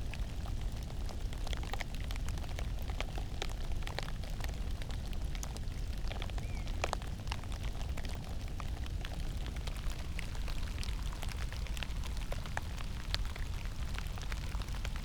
Loka, river Drava - winter will be gone soon, rain on pumpkin shells